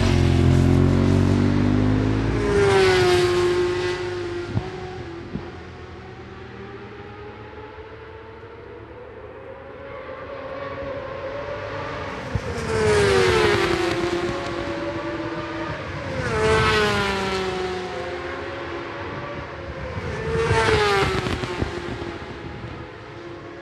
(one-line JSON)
{"title": "Brands Hatch GP Circuit, West Kingsdown, Longfield, UK - british superbikes 2004 ... supersports ...", "date": "2004-06-19 10:21:00", "description": "british superbikes 2004 ... supersport 600s qualifying one ... one point stereo mic to minidisk ...", "latitude": "51.35", "longitude": "0.26", "altitude": "151", "timezone": "Europe/London"}